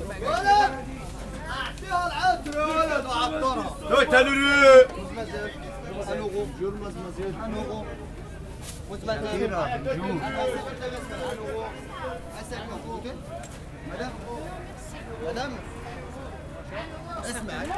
Belleville, Paris, France - Soundwalk through Pere-Lachaise Market
Soundwalk through Pere-Lachaise Market at Ménilmontant, Paris.
Zoom H4n
8 August 2014, ~12:00